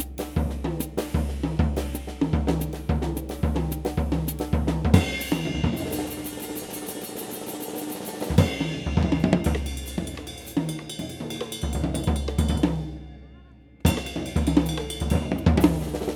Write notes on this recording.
Trio Slučaj sestavljajo Urban Kušar, Francesco Ivone in Matjaž Bajc.